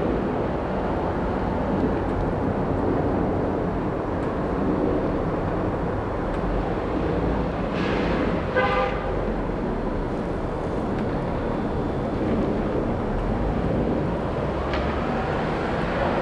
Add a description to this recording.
Recorded above the train tracks on the pedestrian bridge in Strathcona.